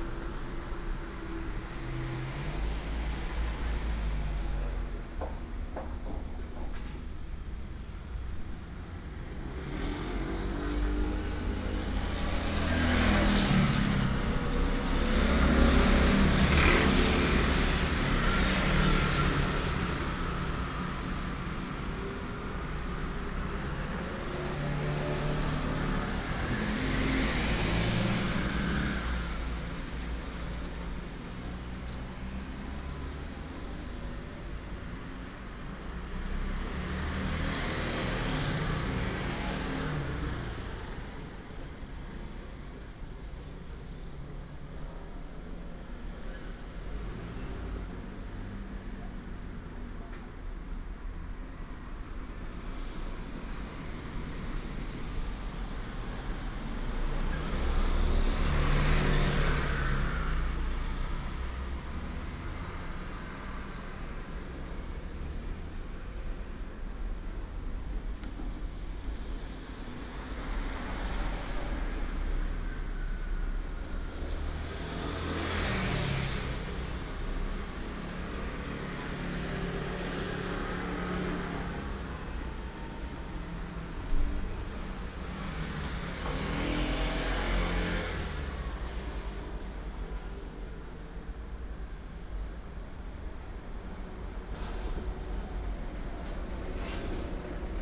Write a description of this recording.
the train runs through, traffic sound, Railroad Crossing